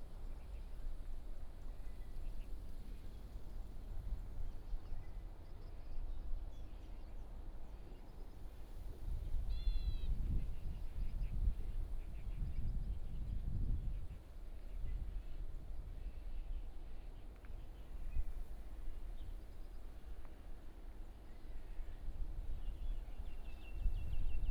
Dayuan Dist., Taoyuan City - The plane flew through
near the aircraft runway, Landing, The plane flew through